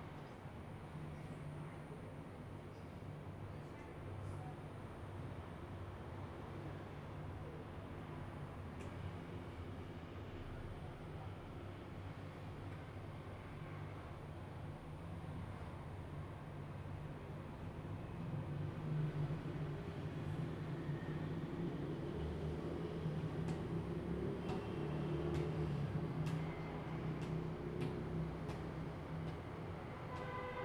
Rende 2nd Rd., 桃園市八德區 - Fireworks and firecrackers
Fireworks and firecrackers, traffic sound
Zoom H2n MS+XY
Bade District, Taoyuan City, Taiwan, 1 March 2018